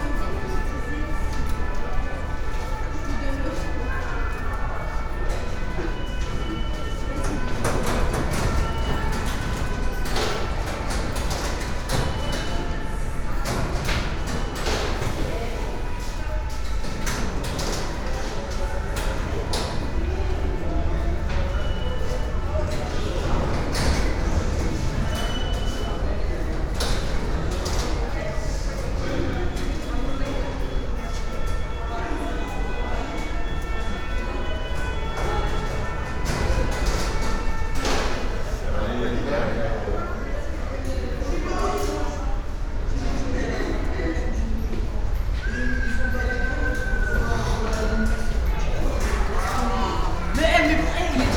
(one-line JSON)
{"title": "Paris, Place De La Republique, Metro station entrance", "date": "2011-05-20 11:15:00", "description": "Metro station entrance", "latitude": "48.87", "longitude": "2.36", "altitude": "39", "timezone": "Europe/Paris"}